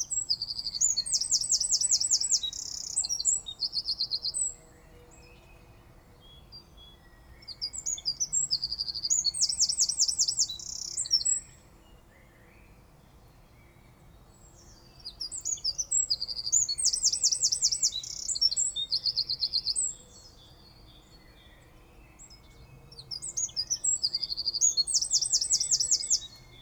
Mont-Saint-Guibert, Belgique - Birds on the early morning
This morning, birds were singing loud. It's spring and everybody of this small world is dredging. It was a beautiful song so I took the recorder before to go to work.
5 April 2016, ~06:00